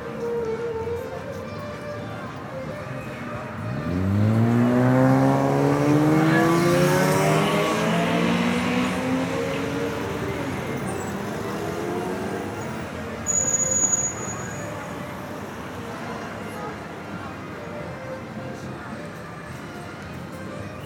Yerevan, Arménie - Republic square
A walk along the Republic square. Since the revolution, people go out on evening and talk to each other’s. During this time, children play with the fountains, or play with strange blue light small boomerang. Euphoria is especially palpable. Happiness is everywhere, it's a pleasure.